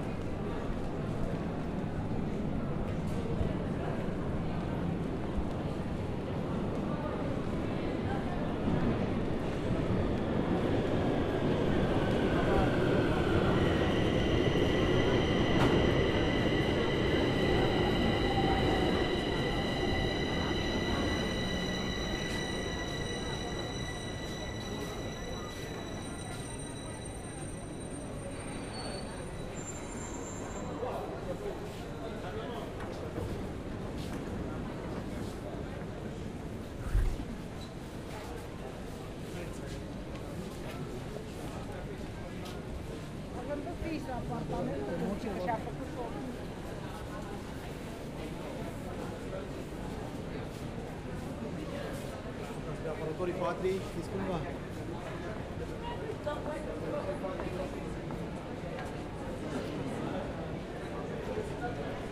Piata Uniri - Subway to Piata Romana